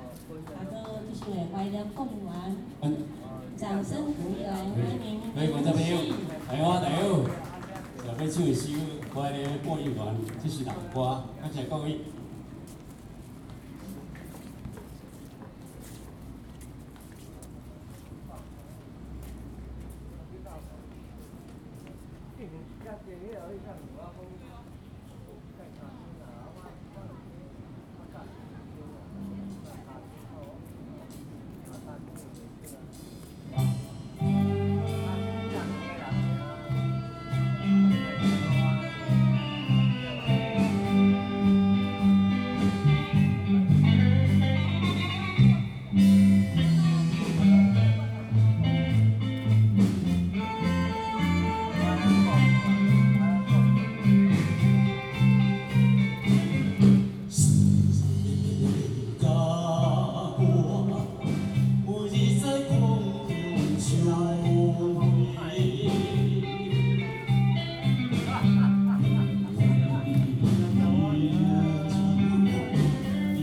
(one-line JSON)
{"title": "The square in front of Confucius temple 孔廟前廣場 - Activity host talking and singing outdoor", "date": "2014-06-28 16:48:00", "description": "Activity host talking and singing outdoor. 戶外歌唱與主持聲", "latitude": "22.99", "longitude": "120.20", "altitude": "20", "timezone": "Asia/Taipei"}